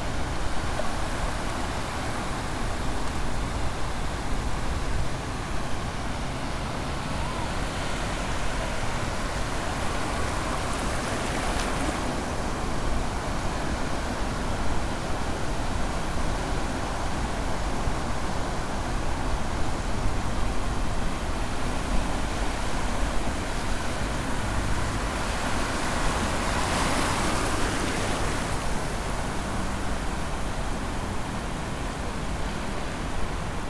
Santos, Brazil - Mar de Santos / Sea of Santos
Mar de Santos, entre os canais 4 e 5. Sea of Santos, between the 4th and 5th canals of the city. Recorded using TASCAM DR-05